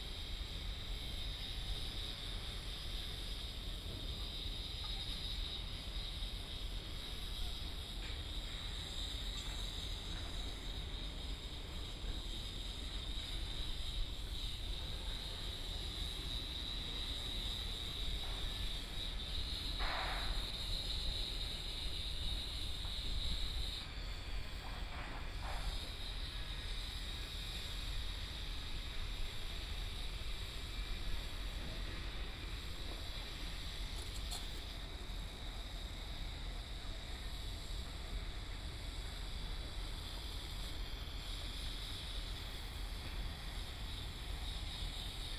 Power Station of Art, Shanghai - Construction site sounds
Standing on the top floor of the museum platform, Construction site sounds, Binaural recording, Zoom H6+ Soundman OKM II